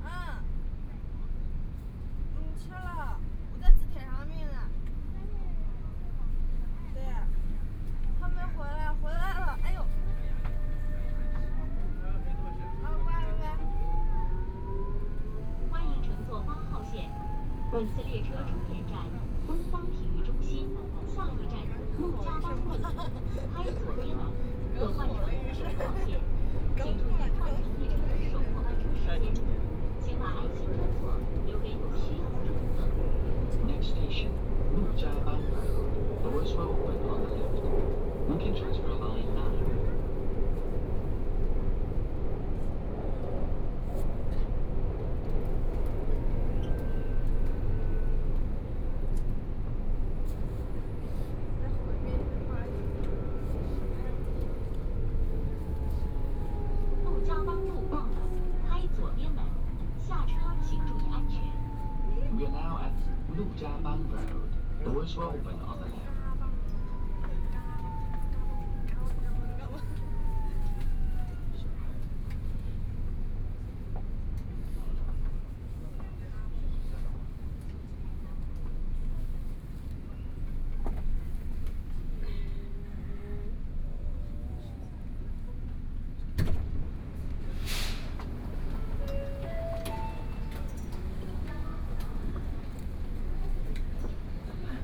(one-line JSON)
{"title": "South Xizang Road, Shanghai - Line 8(Shanghai Metro)", "date": "2013-12-01 13:04:00", "description": "from Laoximen Station to South Xizang Road Station, Binaural recordings, Zoom H6+ Soundman OKM II", "latitude": "31.21", "longitude": "121.48", "altitude": "11", "timezone": "Asia/Shanghai"}